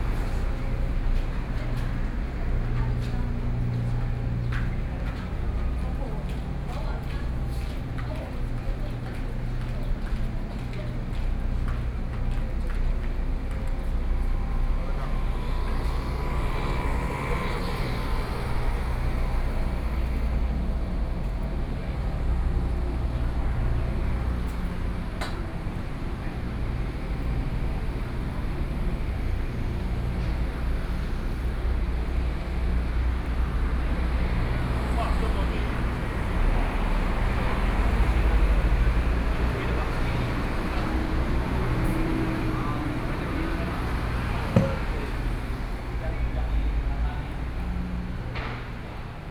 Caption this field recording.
In the temple, Traffic Sound, Hot weather, Opposite the construction site